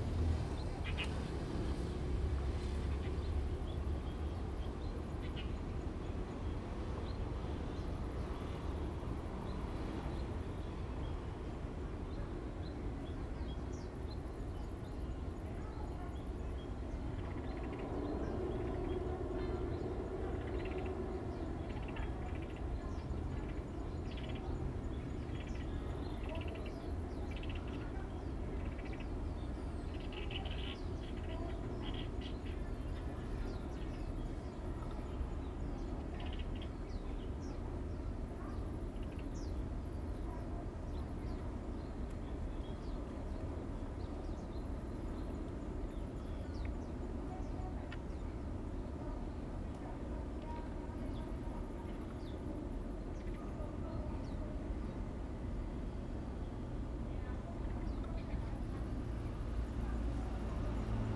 Visitor Centre, in the Park, Ambient sound
Zoom H2n MS+XY +Sptial Audio